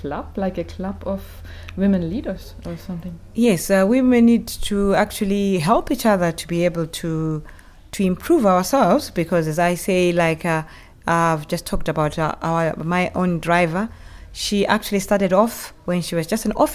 The DA's Office, Binga, Zimbabwe - There's a purpose for me being here...
Mrs. Lydia Banda Ndeti, the District Administrator for Binga, gives us detailed insights in to the daily lives and situations of the rural women in this remote part of the country. As a widow and single mother, she compassionately feels for the women and girls in her district and encourage women to support each other.